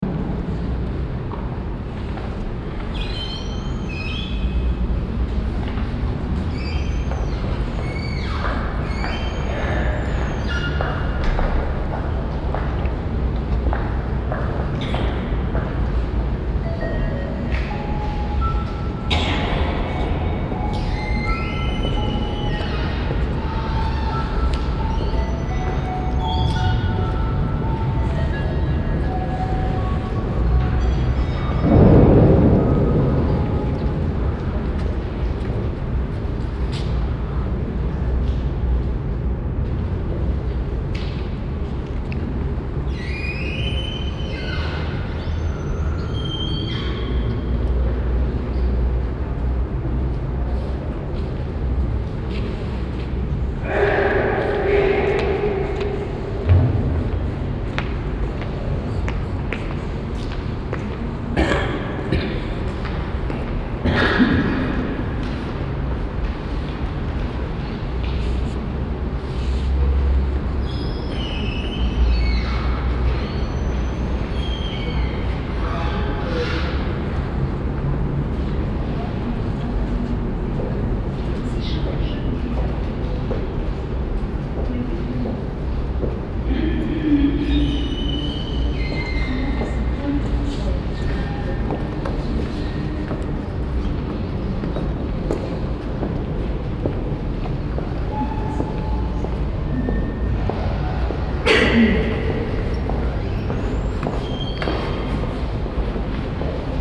Central Area, Cluj-Napoca, Rumänien - Cluj, orthodox Cathedral
Inside the orthodoy cathedral. The sounds of the queeking wooden doors, steps and coughing of visitors in the wide open stone hall and on the wooden steps, a mysterical melody, later in the background the sound of a religious ceremony choir coming from the caverns of the building.
international city scapes - topographic field recordings and social ambiences